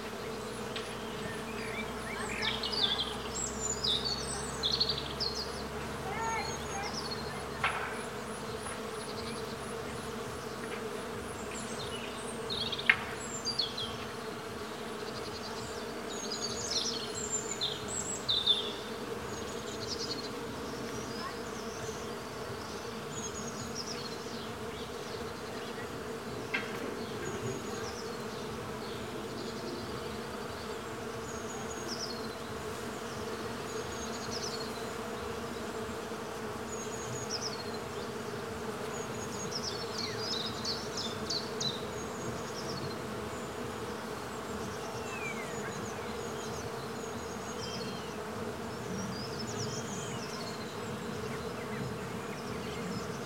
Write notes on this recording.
Viele Bäume der Streuobstwiese stehen in Blüte und empfangen Bienen in Scharen. Sony-D100, UsiPro in den Ästen